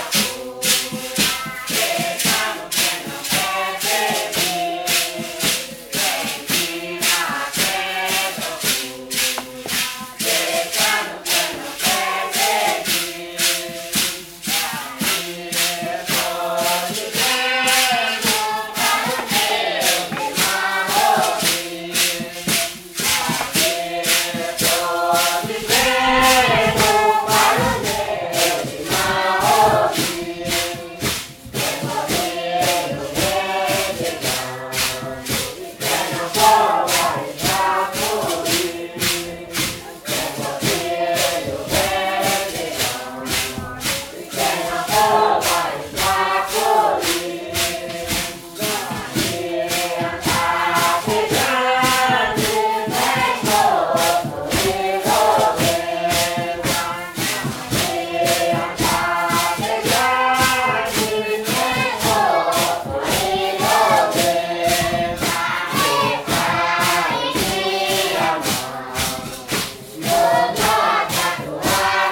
Mapia- Amazonas, Brazilië - santo daime - church
Santo Daime church is founded in the 1930's by Raimundo Irineu Serra aka mestre Irineu. He was a rubber tapper and at one time visited by the holy virgin Mary who instructed him to start this new religion which includes drinking of ayahuasca ('daime') and sing. During festival they are also dancing, from sunset to dawn.
Amazonas, Região Norte, Brasil